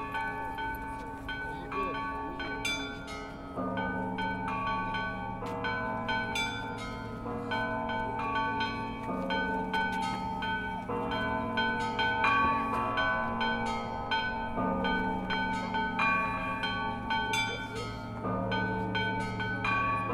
St.Volodymyrs Cathedral, Tarasa Shevchenko Blvd, Kyiv, Ukraine - Easter Sunday Bells
zoom recording of bells as Orthodox families line for blessing outside St.Volodomyr's on Easter Sunday
8 April 2018, 14:00